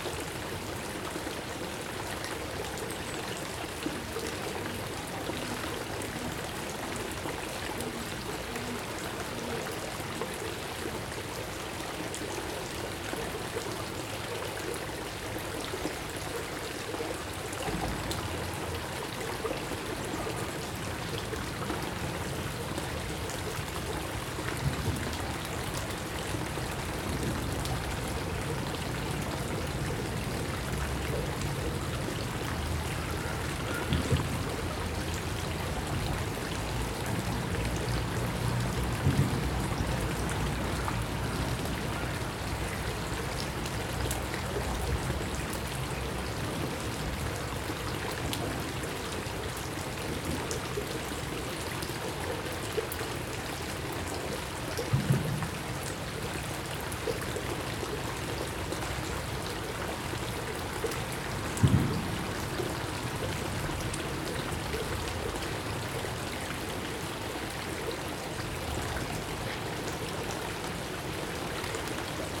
Rue de la Digue, Toulouse, France - bridge 1
circulation, water, birds, water droplet, dog barking, airplane